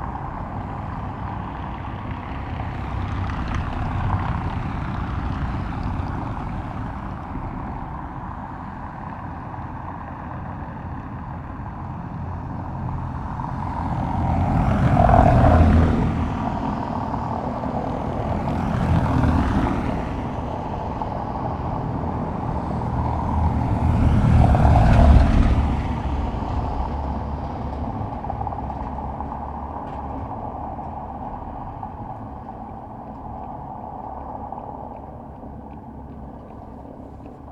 Marine Dr, Scarborough, UK - vehicles on a cobbled road ...
vehicles on a cobbled road ... traffic on Marine Drive Scarborough ... open lavalier mics clipped to a sandwich box ... bird calls from herring gulls ... after a ten minutes a peregrine falcon parked in the cliffs above the road and was distantly vocal for some time ... occasional voices and joggers passing by ...
6 December 2017